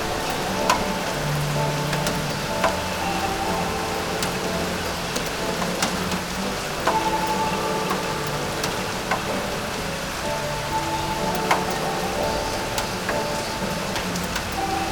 from/behind window, Mladinska, Maribor, Slovenia - rain in april, chocolate